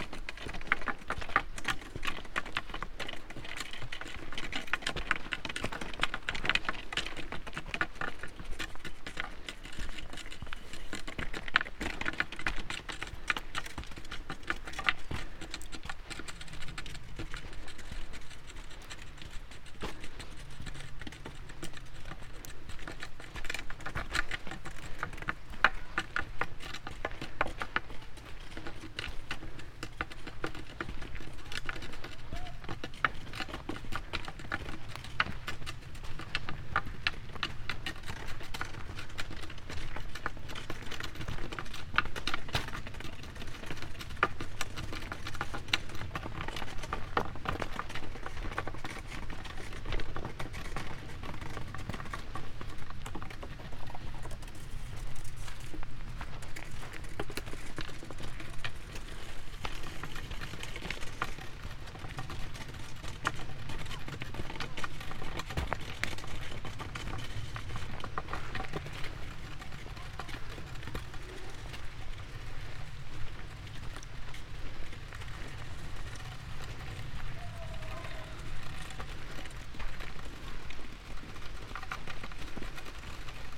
extantions, Lovrenška jezera, Slovenia - two branches
a walk with expanded arms - two branches, downwards on a rocky pathway through forest